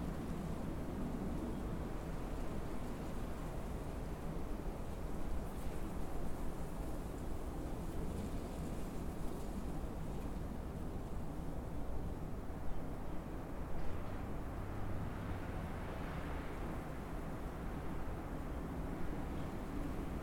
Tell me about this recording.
6070 White Rock Cr. Boulder Colorado 80301: Habitat Community Park: February 1st 2:00pm. With my cat following me.